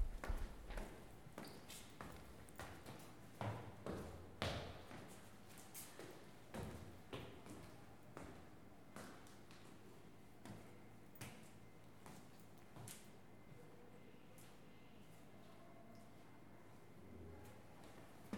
Dpto. Prehistoria y Arqueología - Facultad de Filosofía y Letras, 28049 Madrid, España - People going up/down stairs
This recording shows the distinctive sounds of the stairs inside the faculty made by people walking through them.
You can hear:
- Sounds of steps going up and down stairs
- Some crackling sound from the stairs
- Feet crawling
Gear:
Zoom h4n
- Cristina Ortiz Casillas
- Erica Arredondo Arosa
- Daniel Daguerre León